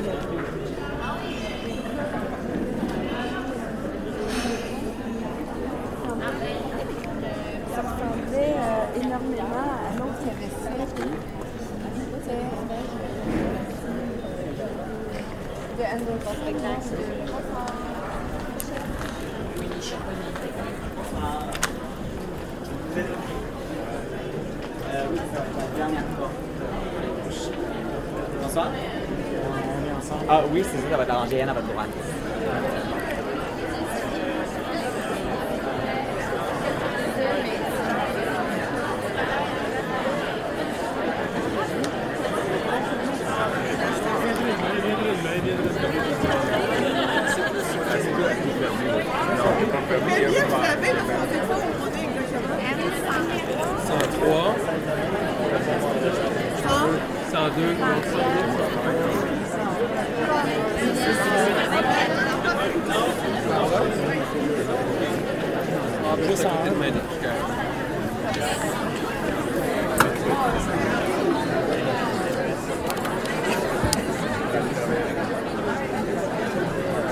Montreal: Théâtre du Nouveau Monde - Théâtre du Nouveau Monde
equipment used: Ipod Nano with Belkin Interface
Entering the Theatre du Nouveau Monde for a presentation of Le Dragon Bleu by Robert Lepage
QC, Canada, 20 May 2009